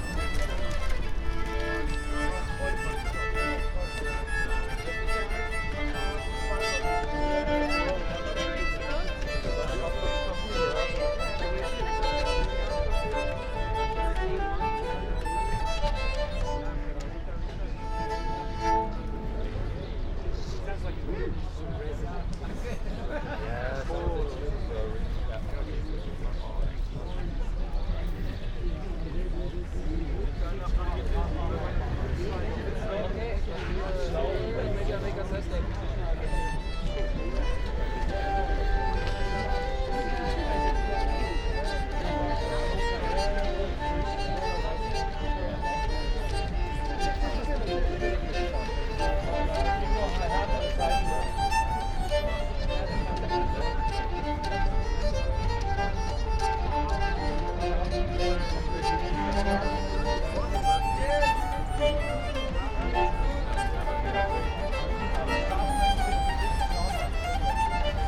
{
  "title": "berlin, maybachufer: speakers corner neukölln - busker with an ancient instrument",
  "date": "2019-05-07 15:00:00",
  "description": "Berlin Maybachufer, weekly market, busker, ambience.\nfield radio - an ongoing experiment and exploration of affective geographies and new practices in sound art and radio.\n(Tascam iXJ2 / iPhoneSE, Primo EM172)",
  "latitude": "52.49",
  "longitude": "13.43",
  "altitude": "42",
  "timezone": "Europe/Berlin"
}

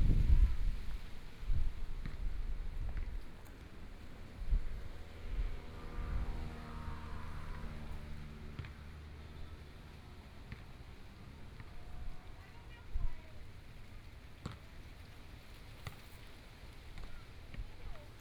{
  "title": "台東市台東運動公園 - the wind moving the leaves",
  "date": "2014-01-15 15:28:00",
  "description": "The sound of the wind moving the leaves, Playing basketball voice, Students are playing basketball, Traffic Sound, Zoom H6 M/S",
  "latitude": "22.74",
  "longitude": "121.14",
  "timezone": "Asia/Taipei"
}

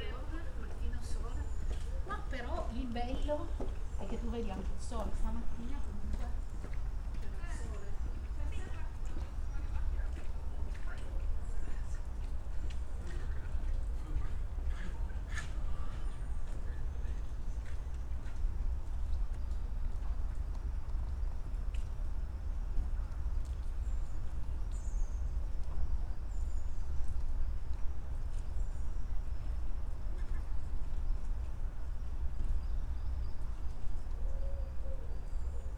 {
  "title": "Houses, Dublin, Irlande - Trinity at the window",
  "date": "2019-06-16 21:00:00",
  "description": "Trinity college\nA lovely nice place, after the rain....and summer graduating. The tourists are gone, maybe a few students are still here.\nRecording devices : Sound device mix pre 6 + 2 primo EM172 AB 30 cm setup.",
  "latitude": "53.34",
  "longitude": "-6.26",
  "altitude": "11",
  "timezone": "Europe/Dublin"
}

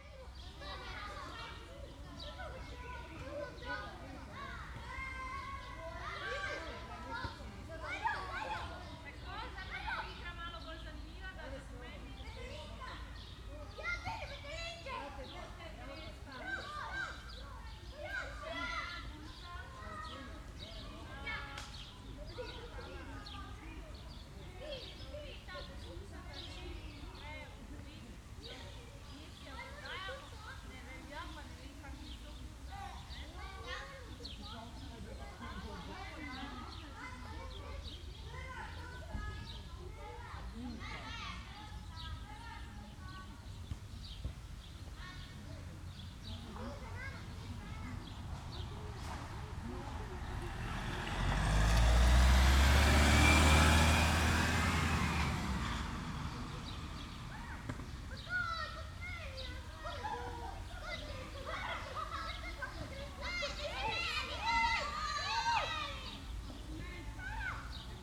{
  "title": "Maribor, Iztokova ulica - schoolyard",
  "date": "2012-05-30 11:40:00",
  "description": "schoolyard ambience at Iztokova road\n(SD702 DPA4060)",
  "latitude": "46.56",
  "longitude": "15.63",
  "altitude": "279",
  "timezone": "Europe/Ljubljana"
}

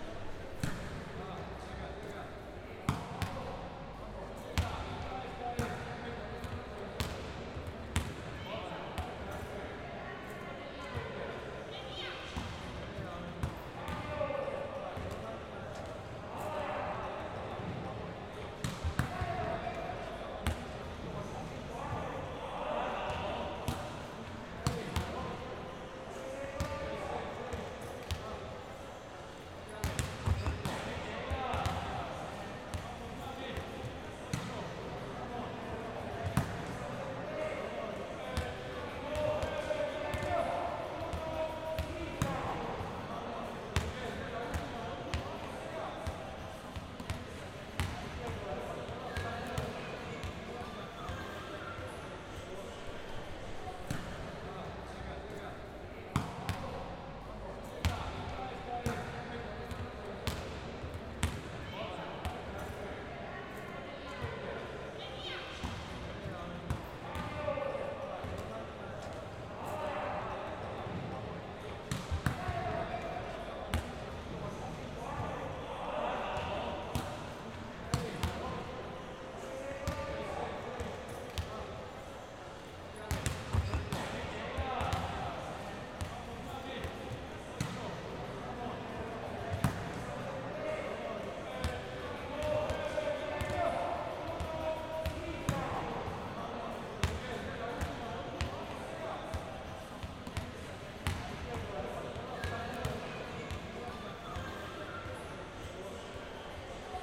{
  "title": "KR 87 # 48 BB - 30, Medellín, Antioquia, Colombia - Coliseo, Universidad de Medellín",
  "date": "2021-09-23 13:10:00",
  "description": "Descripción\nSonido tónico: Entrenamiento de Voleibol\nSeñal sonora: Golpes al balón\nGrabado por Santiago Londoño Y Felipe San Martín",
  "latitude": "6.23",
  "longitude": "-75.61",
  "altitude": "1563",
  "timezone": "America/Bogota"
}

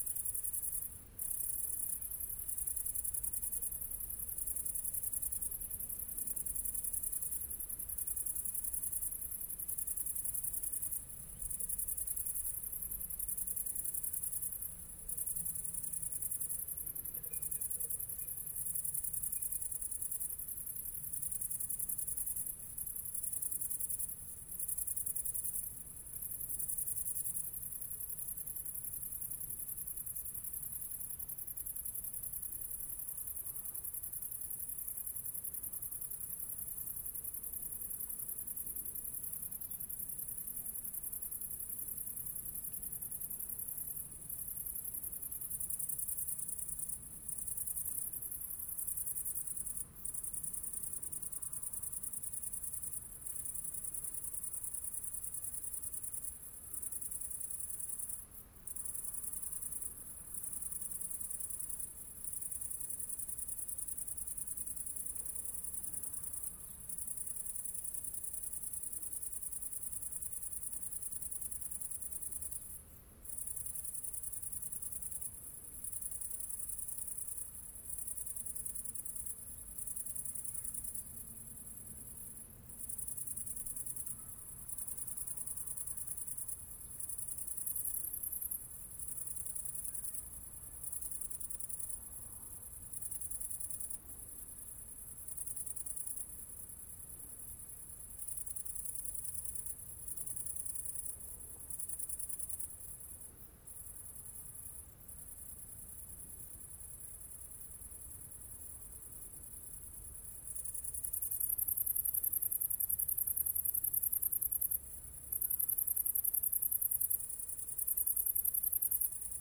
{
  "title": "Mont-Saint-Guibert, Belgique - Criquets",
  "date": "2016-08-14 19:45:00",
  "description": "Criquets in an orchard, in a very quiet landscape.",
  "latitude": "50.62",
  "longitude": "4.61",
  "altitude": "115",
  "timezone": "Europe/Brussels"
}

